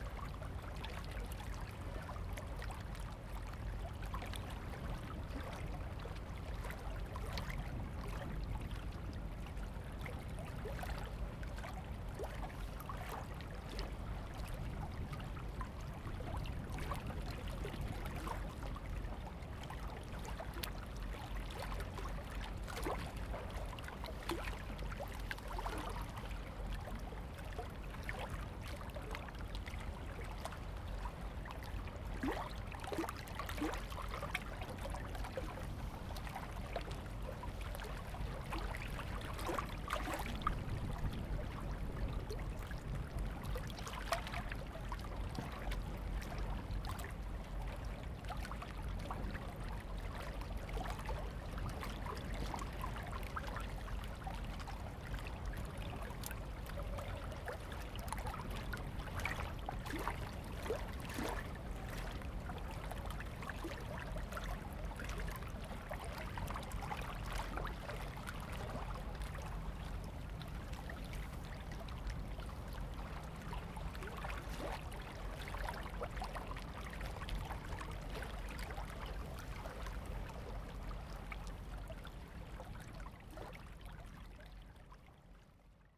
彌陀漁港, Mituo Dist., Kaohsiung City - At the pier
At the pier, Sound of the waves, Construction sound
Zoom H2n MS+XY
Kaohsiung City, Taiwan